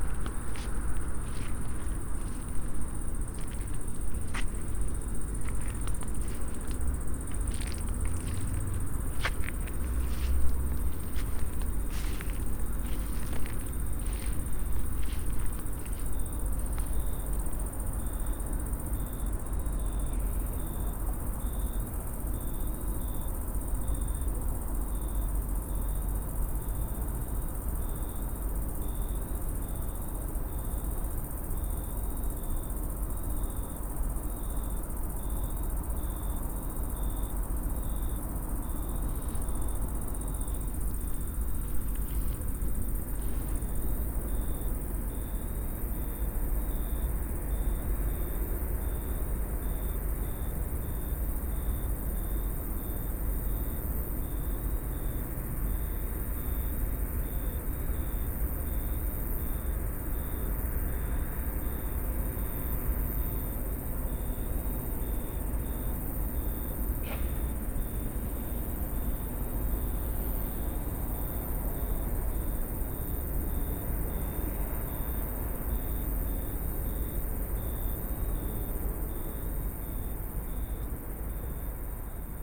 with crickets, car traffic and train